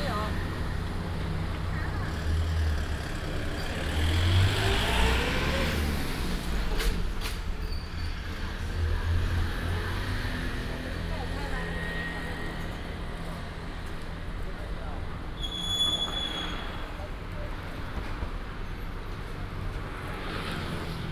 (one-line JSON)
{"title": "Zhuzhong Rd., Zhudong Township - In the corner", "date": "2017-01-17 10:11:00", "description": "In the corner of the road, In front of the convenience store, Traffic sound", "latitude": "24.78", "longitude": "121.03", "altitude": "78", "timezone": "GMT+1"}